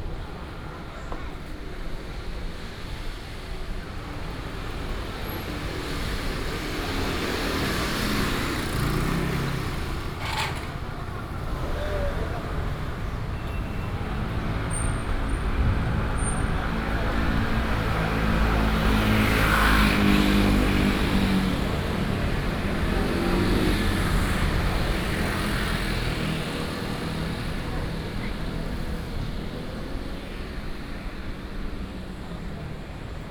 Xindian Rd., Xindian Dist., New Taipei City - walking in the Street
walking in the Street